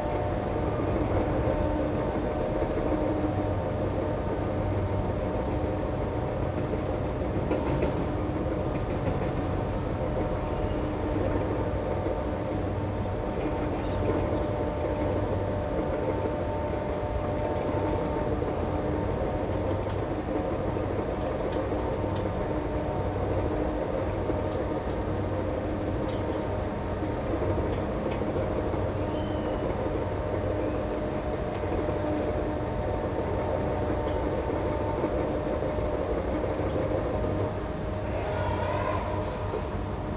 Endless building site, U Alexanderplatz, Berlin, Germany - Alexanderplatz, an endless building site
Half of Alexanderplatz is currently a building site that effects its soundscape greatly. The sound of drilling, earth moving and other heavy machines is ever present at levels that mask people and generally obscures the sonic atmosphere. It is no longer possible to hear the deep bass from the UBahn underground. Surrounding roads and walkways have been partially blocked and traffic flows re-routed. Yellow trams no longer rumble across the open plaza (a key sound) as the tracks are being replaced. Berlin has constant building work that shifts from location to location. In time this one will be completed, only for the next to start.